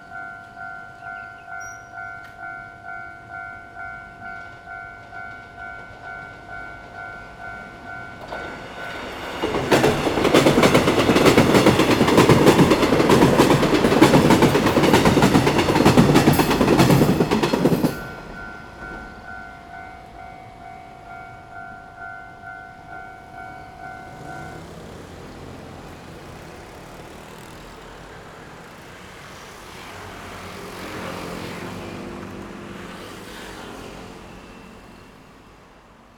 {"title": "Xuejin Rd., Wujie Township - at railroad crossing", "date": "2014-07-25 17:59:00", "description": "At railroad crossing, Traffic Sound, Trains traveling through\nZoom H6 MS+ Rode NT4", "latitude": "24.70", "longitude": "121.77", "altitude": "9", "timezone": "Asia/Taipei"}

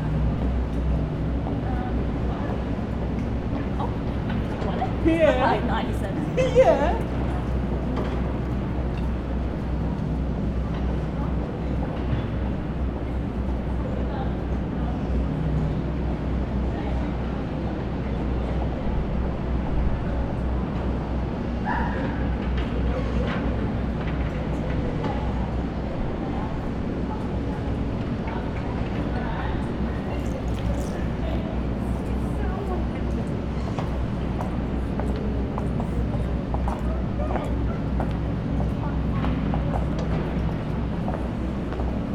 {"title": "neoscenes: construction work near library", "date": "2011-05-06 13:19:00", "latitude": "-37.72", "longitude": "145.05", "altitude": "85", "timezone": "Australia/Melbourne"}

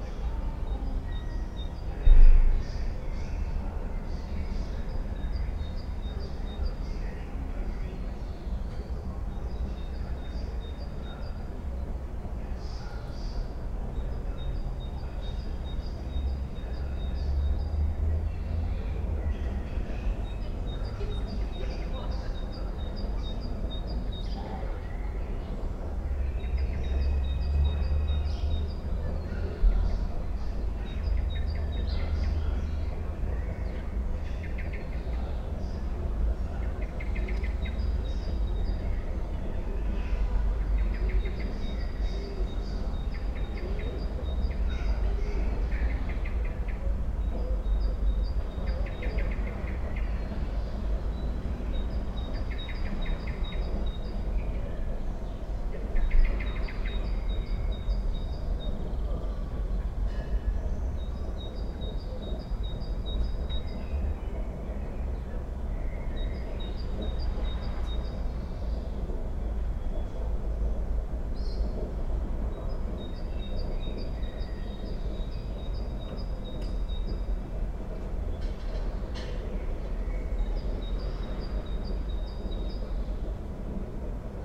{"title": "from/behind window, Mladinska, Maribor, Slovenia - nostalgia", "date": "2013-05-10 18:23:00", "description": "swallows, pigeons, blackbirds, cafetiera, cars, song from a radio", "latitude": "46.56", "longitude": "15.65", "altitude": "285", "timezone": "Europe/Ljubljana"}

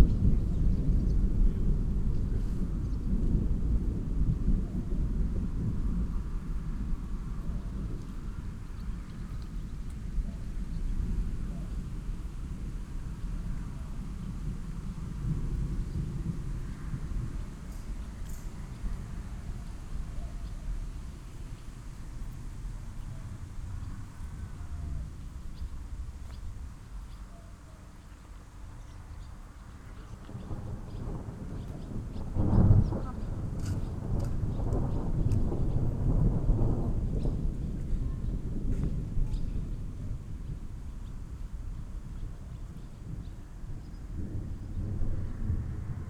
{"title": "Letschin, Bahnhof - station ambience, thunderstorm", "date": "2015-08-30 16:50:00", "description": "Letschin Bahnhof, main station, thunderstorm arrives, station ambience. This is a small rural station, trains commute between Eberwalde and Frankfurt/Oder every 2 hours.\n(Sony PCM D50, DPA4060)", "latitude": "52.63", "longitude": "14.35", "altitude": "7", "timezone": "Europe/Berlin"}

